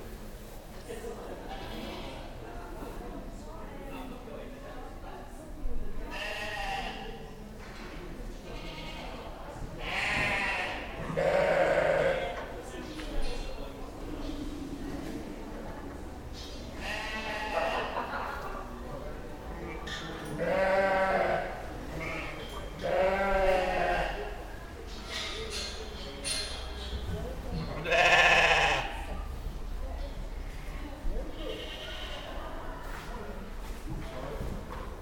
Woolfest, Mitchell's Auction House, Cockermouth, Cumbria, UK - Sheep baaing at the start of the second day of Woolfest
This is the sound of the sheep in their pens at the start of day two of Woolfest. Woolfest is an amazing annual festival of sheep and wool where knitters can buy all manner of amazing woolly produce but also meet the shepherds and animals from whom these goods ultimately come. The festival is held in an enormous livestock auction centre, and the first and last comrades to arrive are the sheep, alpacas, and other friends with spinnable fleece or fibre. Their shepherds and handlers often camp on site and before the knitters arrive in their droves at 10am the pens are swept clean and the animals are checked over and fed. One of the shepherds explained that the sheep baa lots at this point in the day because each time a person walks by their pens they think they might be bringing food. You can also hear the swifts that roost in the roof of the auction mart, the rusty gates of the pens, the chatter of stall holders and somebody sweeping.
27 June 2015